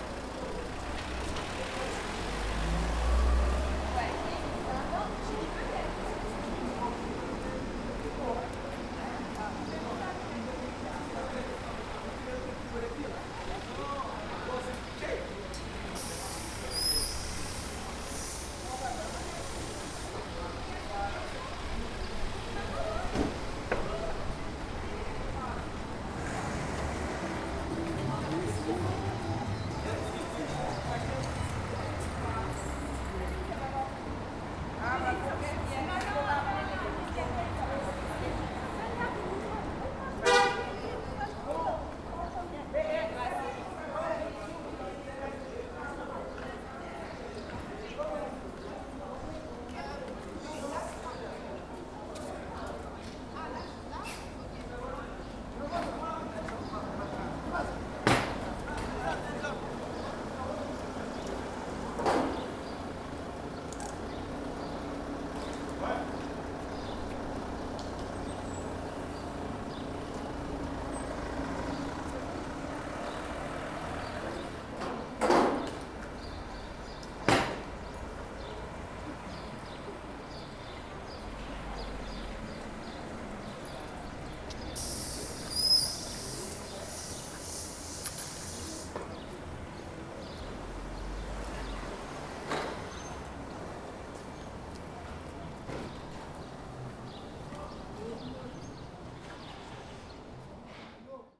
Rue Lanne, Saint-Denis, France - Intersection of R. Legion dhonneur + R. Lanne
This recording is one of a series of recording, mapping the changing soundscape around St Denis (Recorded with the on-board microphones of a Tascam DR-40).